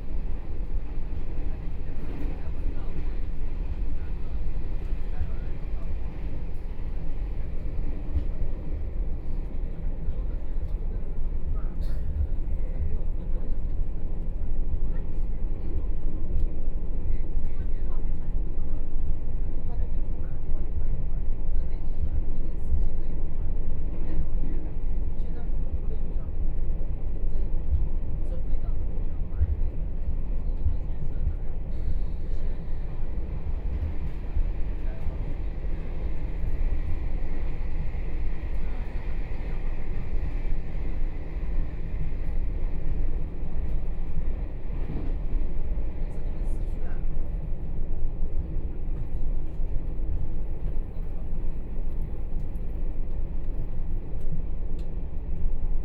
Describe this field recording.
from Yilan Station to Luodong Station, Binaural recordings, Zoom H4n+ Soundman OKM II